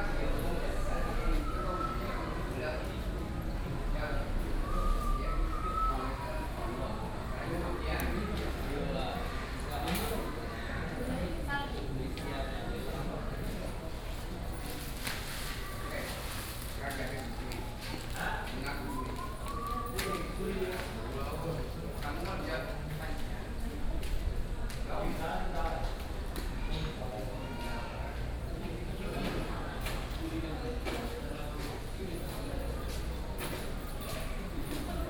Ruifang Station, Ruifang Dist., New Taipei City - In the station lobby
In the station lobby
Sony PCM D50+ Soundman OKM II